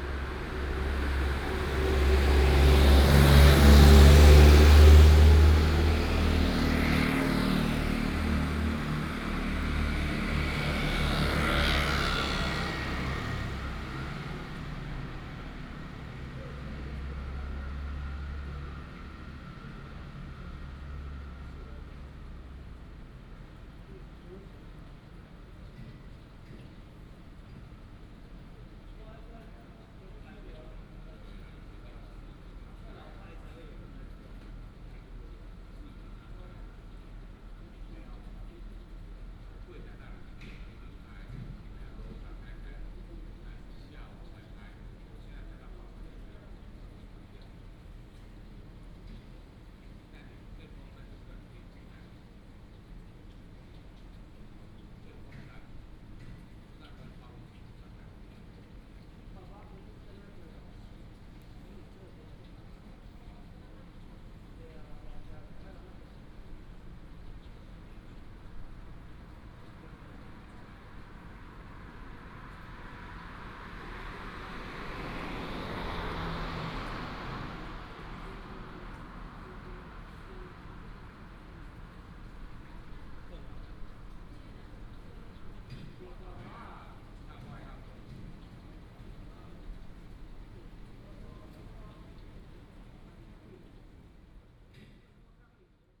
Late at night, traffic sound, Binaural recordings, Sony PCM D100+ Soundman OKM II
Hsinchu City, Taiwan, 21 September